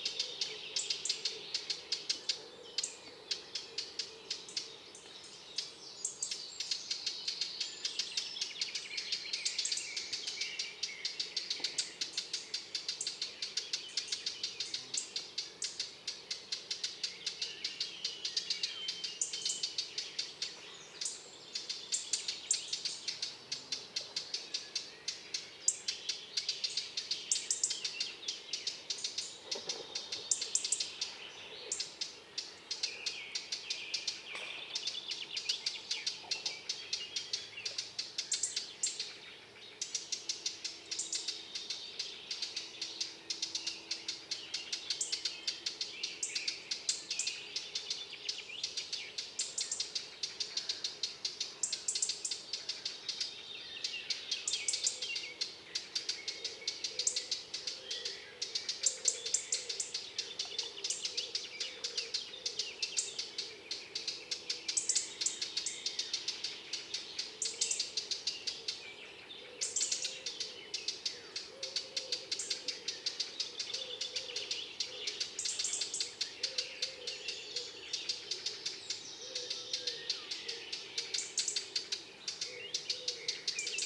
Lac de la Liez - Dawn chorus
Dawn chorus recorded after a night in my tent, on the border of the lake.